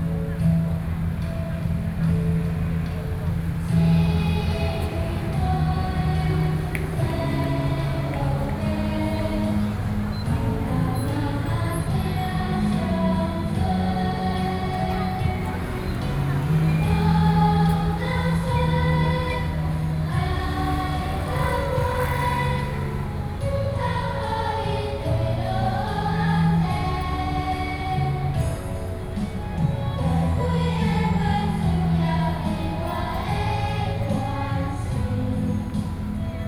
Beitou, Taipei - Graduation
Elementary School Graduation, Sony PCM D50 + Soundman OKM II
台北市 (Taipei City), 中華民國, 21 June 2013